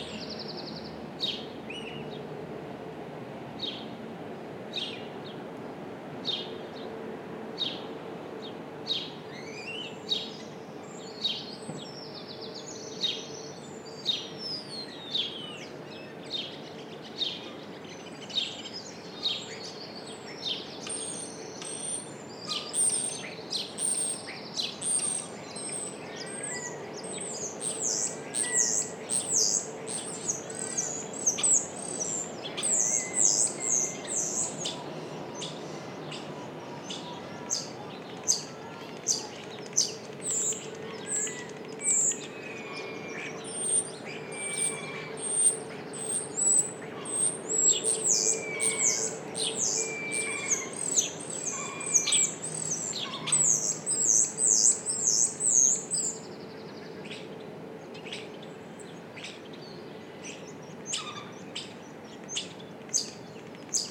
Frederick Cres, Port Ellen, Isle of Islay, UK - Port Ellen
Birds and ambience near the harbour of Port Ellen in the morning. You can hear some sounds coming from the cars approaching the harbour.
Recorded with Sound Devices MixPre-6 mkII and a pair of LOM Uši Pro microphones.